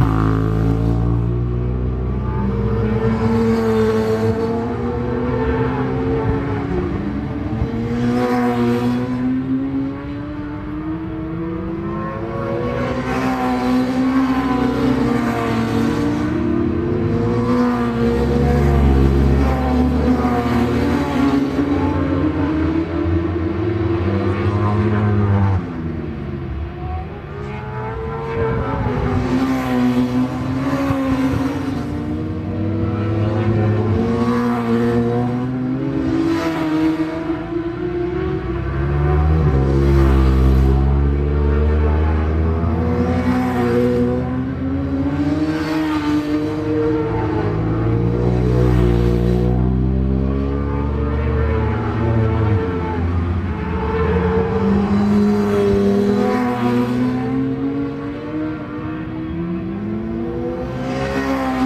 Leicester, UK - british superbikes 2002 ... superbikes ...
british superbikes 2002 ... superbike free practice ... mallory park ... one point stereo mic to minidisk ... date correct ... time not ...